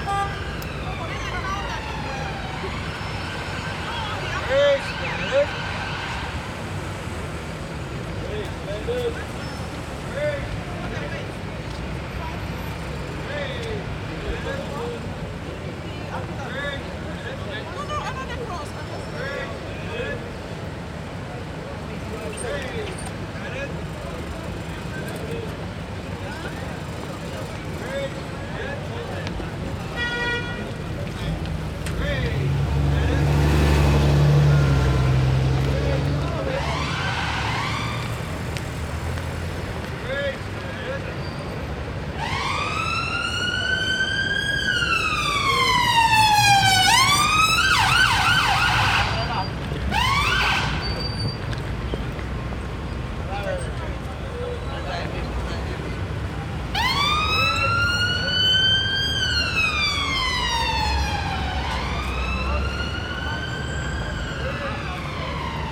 Liverpool Street station, Bishopsgate, City of London, Greater London, Vereinigtes Königreich - Liverpool Street station, London - Street vendors distributing the 'Free Standard'
Liverpool Street station, London - Street vendors distributing the 'Free Standard'. Street cries, traffic, chatter, steps, passers-by, ambulance.
[Hi-MD-recorder Sony MZ-NH900, Beyerdynamic MCE 82]
14 February, 17:09